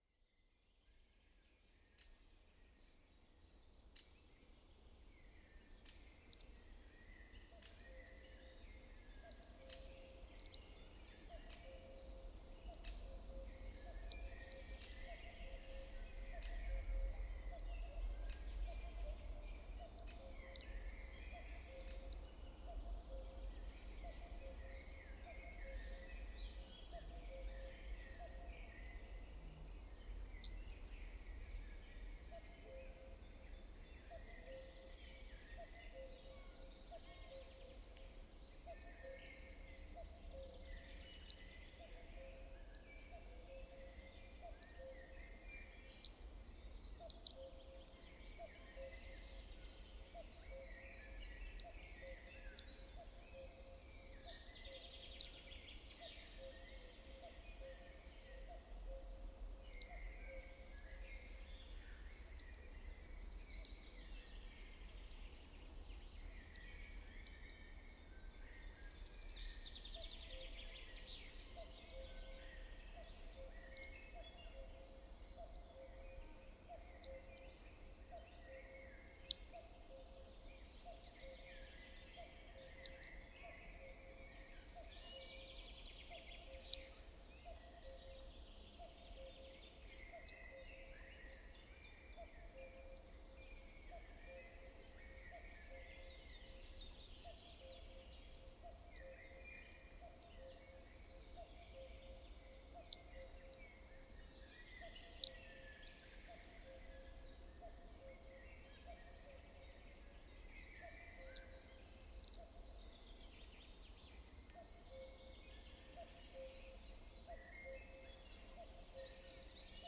recorded with omni mics and electromagnetic antenna. cuckoo and sferics.